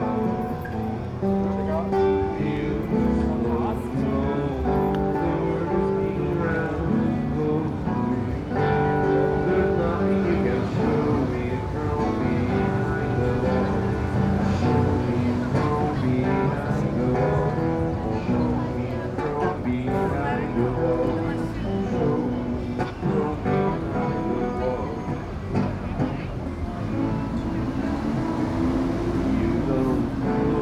Calçadão de Londrina: Músico de rua: violonista (amplificado) - Músico de rua: violonista (amplificado) / Street musician: guitarist (amplified)
Panorama sonoro: músico de rua cantando e tocando a música “Sangue latino” de Ney Matogrosso com auxílio de microfone e caixa amplificadora, no Calçadão de Londrina nas proximidades da Praça Marechal Floriano Peixoto. Algumas pessoas sentavam-se nos bancos em frente ao músico e acompanhavam suas músicas. Outras passavam sem dar atenção e, algumas, contribuíam com algum dinheiro.
Sound panorama: A street musician singing and playing the song "Sangue latino" by Ney Matogrosso with the help of a microphone and amplifier box, on the Londrina boardwalk near Marechal Floriano Peixoto Square. Some people sat on benches in front of the musician and accompanied their music. Others passed without paying attention and some contributed money.